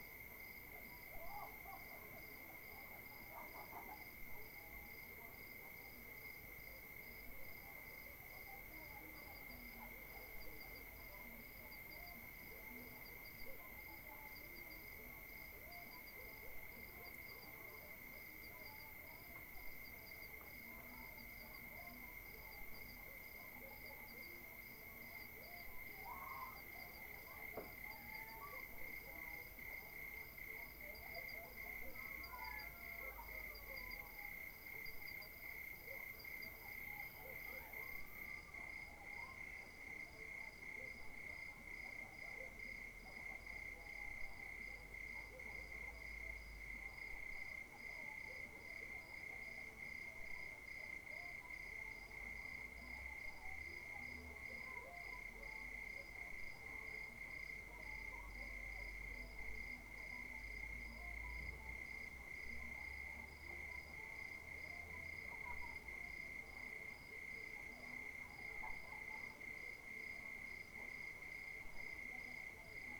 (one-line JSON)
{"title": "Ruelle des Artisans, CILAOS Réunion - 20190120 2300", "date": "2019-01-20 23:00:00", "description": "Paysage sonore nocturne au clair de lune.\nZoomH4N", "latitude": "-21.13", "longitude": "55.47", "altitude": "1223", "timezone": "Indian/Reunion"}